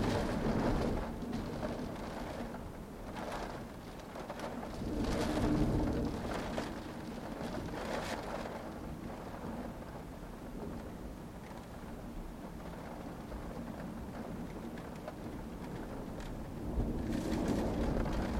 Olafsvik - Wind and Storm in the car
Wind, rain and storm outside the car.